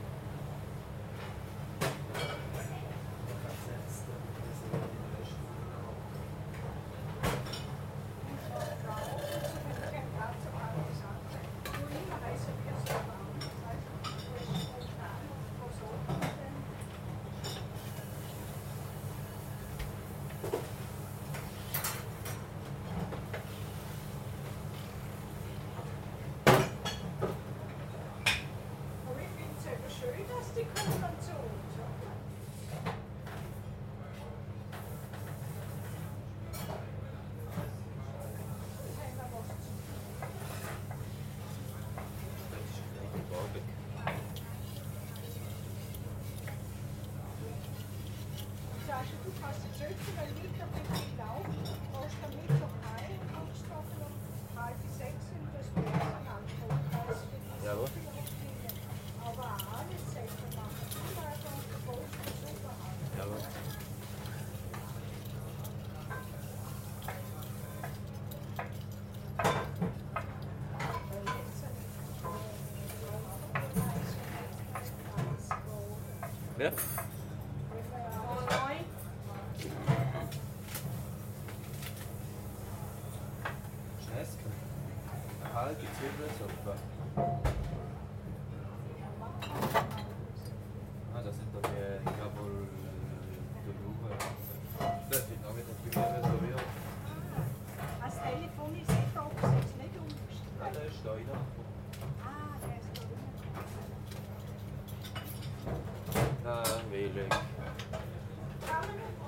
St. Gallen (CH), restaurant kitchen
inside recording, kitchen of restaurant "Zum Goldenen Schäfli" (recommended!).
recorded june 27th, 2008, around 10 p. m.
project: "hasenbrot - a private sound diary"
St. Gallen, Switzerland